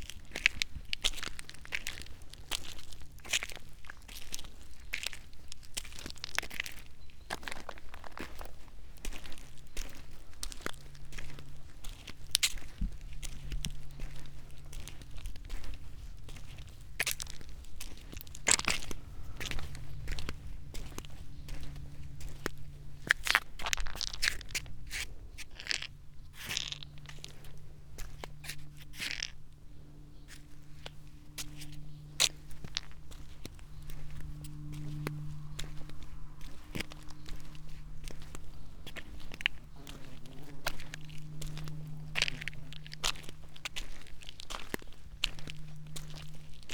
quarry, Marušići, Croatia - void voices - stony chambers of exploitation - walking
Koper, Slovenia, 22 July 2015